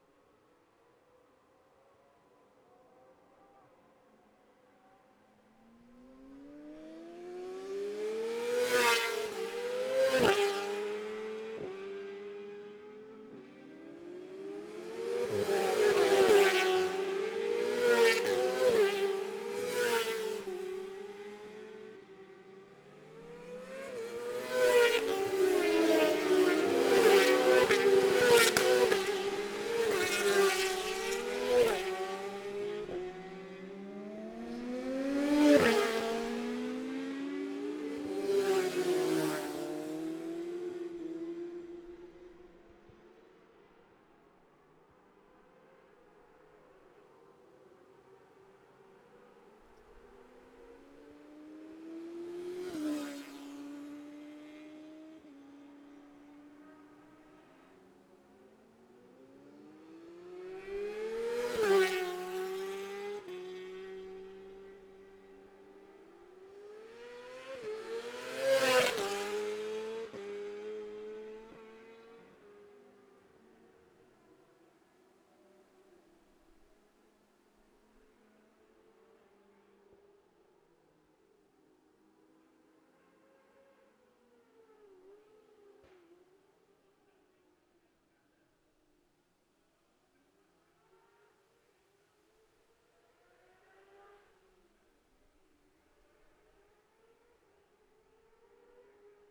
Jacksons Ln, Scarborough, UK - Gold Cup 2020 ...
Gold Cup 2020 ... 600 odds practice ... dpa bag MixPre3 ...